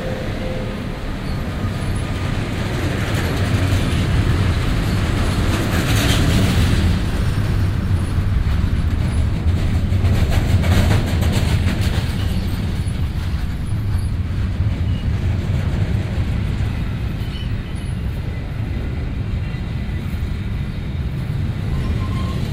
cologne, south suedbrücke, trainan - cologne, sued, suedbrücke, zug fährt auf und hält an
project: social ambiences/ listen to the people - in & outdoor nearfield recordings